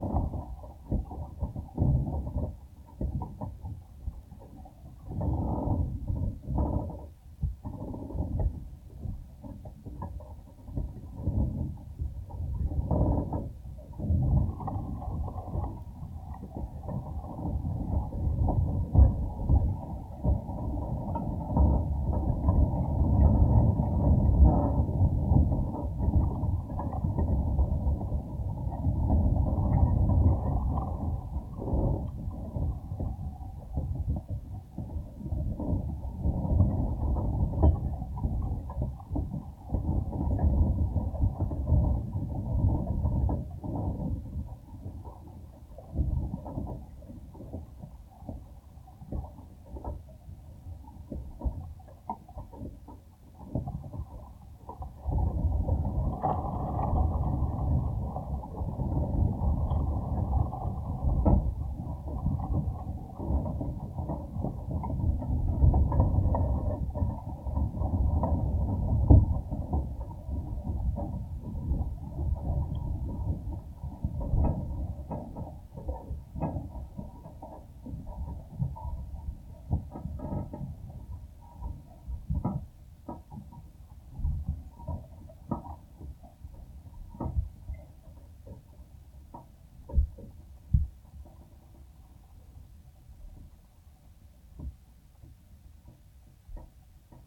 Recording from geophone attached to chain link fence.
Saint Louis County, Missouri, United States, 8 August